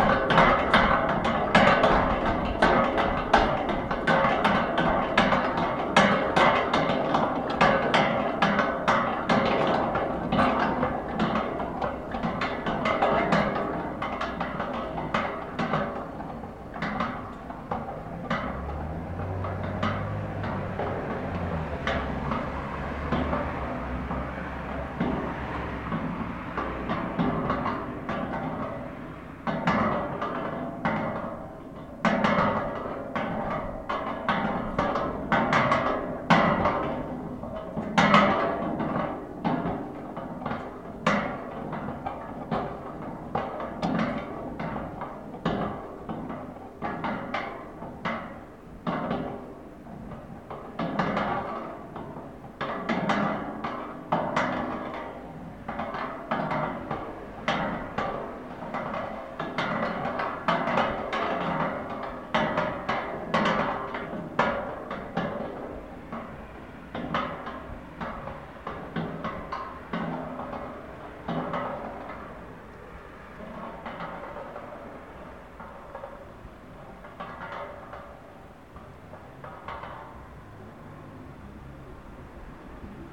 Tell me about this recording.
Walking home late at night there was just enough breeze to catch the rotatable metal KEYS CUT sign to set it spinning in motion. Using my small TASCAM DR100 and a home made wind sock and putting the microphone very close to the base and side of the sign made this recording.